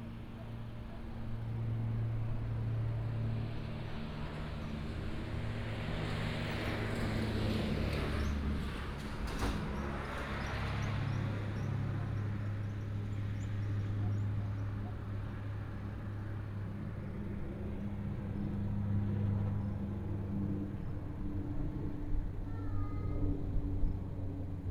牡丹社事件紀念公園, Pingtung County - In the parking lot
In the parking lot, traffic sound, Bird cry, Dog barking, Plane flying through
Mudan Township, 199縣道